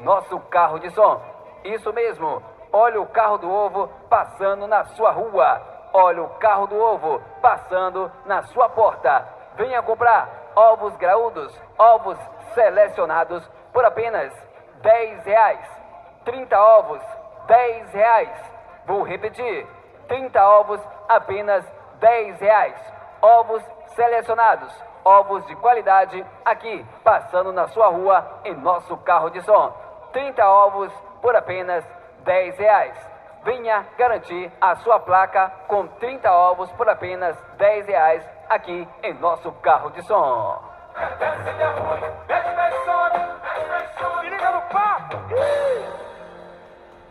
Sábado de feira, carro do ovo parado no Beco da Morte.
Market place at Saturday, egg cart stopped at the Beco da Morte.
Cachoeira - BA, Brazil, 27 January 2018